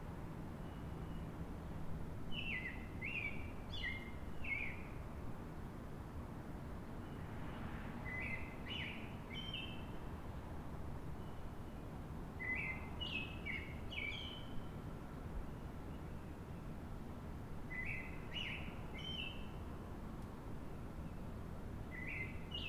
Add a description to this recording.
Bird call to another distant bird. The hum of the highway I-93.